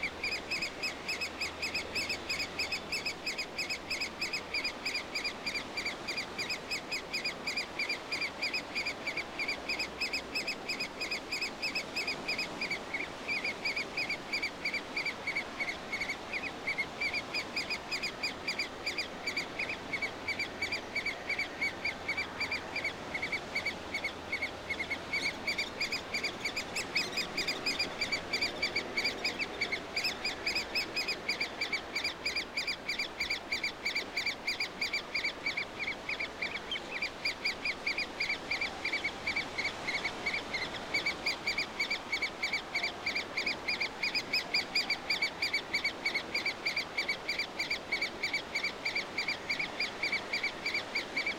Lesser-spotted woodpeckers nest. Young chicks in Silver birch nest. Crows. Sea background. Rode NT4
Umeå Municipality, Sweden, June 2011